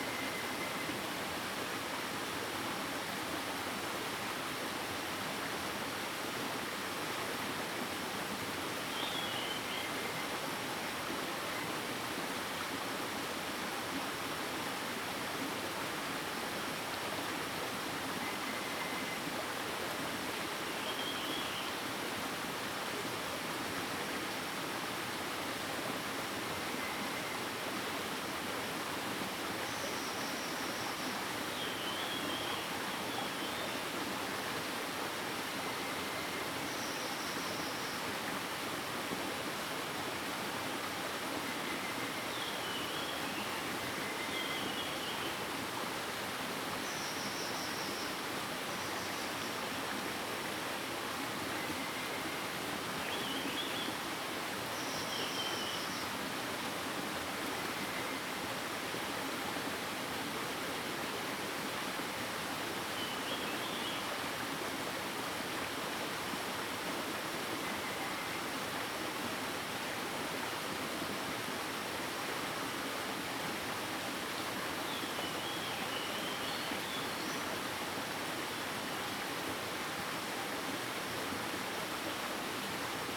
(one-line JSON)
{"title": "種瓜坑, 桃米里 Puli Township - Stream and Birds", "date": "2016-04-28 08:25:00", "description": "Stream and Birds, In the woods\nZoom H2n MS+XY", "latitude": "23.93", "longitude": "120.90", "altitude": "635", "timezone": "Asia/Taipei"}